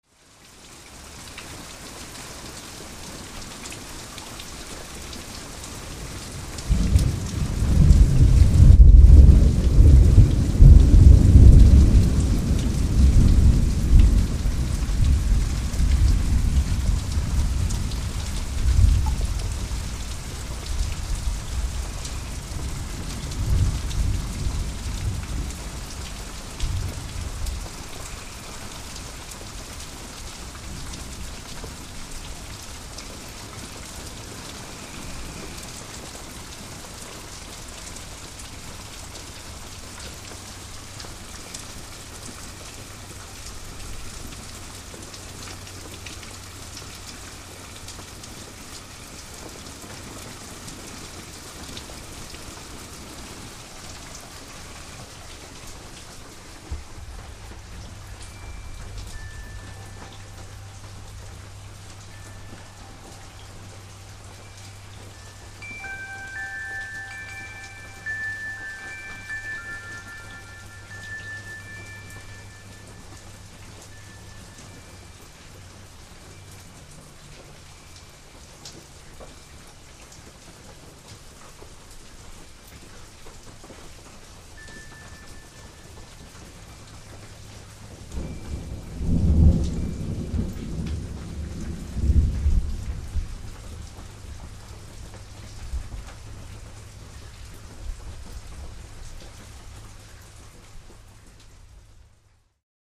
Lakewood Village Park, Grove OK
Grove, Oklahoma thunder and chimes.
OK, USA